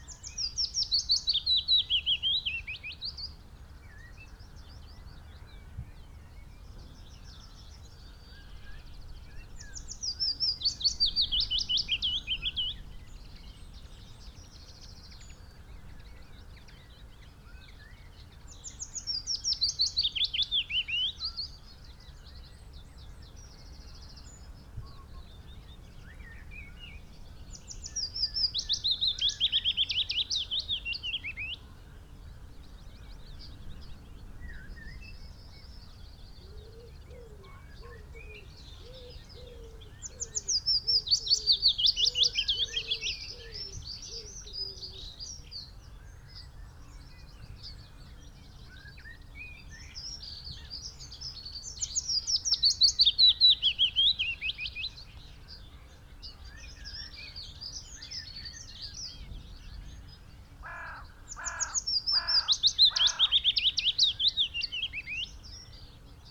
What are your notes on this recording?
willow warbler song ... pre-amped mics in a SASS on tripod to Oylmpus LS 14 ... bird song ... calls from ... crow ... dunnock ... pheasant ... blackbird ... skylark ... yellow wagtail ... wren ... robin ... dunnock ... linnet ... red-legged partridge ... yellowhammer ... wood pigeon ... some traffic noise ... bird moves from this song post to others close by ...